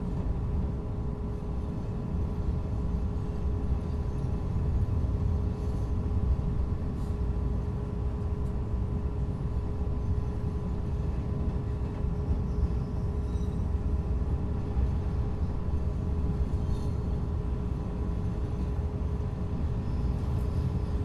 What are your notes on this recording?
Part of my morning commute on a Blue Line train beginning underground at Belmont CTA station, through the above-ground, elevated station at Western. Tascam DR-40.